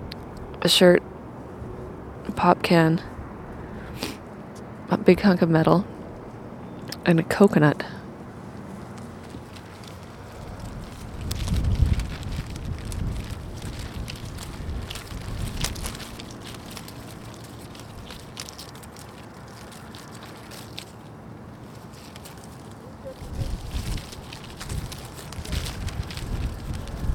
Montreal: Lachine Canal: Atwater bridge - Lachine Canal: Atwater bridge
There's Something under the bridge - An observation, made one evening in late February 2002. I have seen almost distressingly random objects abandoned on the ice under the bridge at other times--baby strollers, a single boot--but the coconut seemed worth noting. The other sound is indicative of the small sounds I hear in the winter along the canal--the wind in plastic bags hanging out of a garbage can or caught in a chain link fence, made soft by the snow and wind.
QC, Canada, February 2002